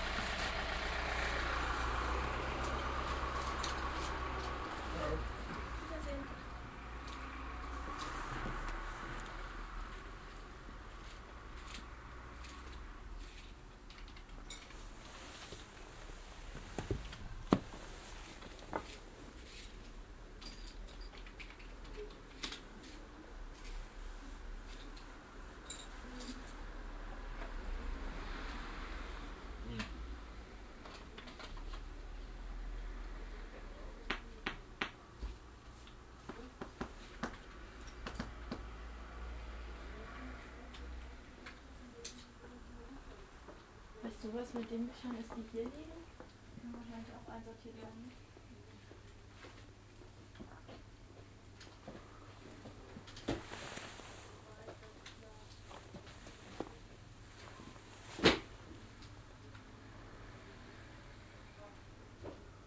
cologne, apostelnstr, buchhandlung koenig
inside cologne's most famous art book store in the morning time
soundmap nrw - social ambiences and topographic field recordings
apostelnstr, buchhandlung könig, May 2008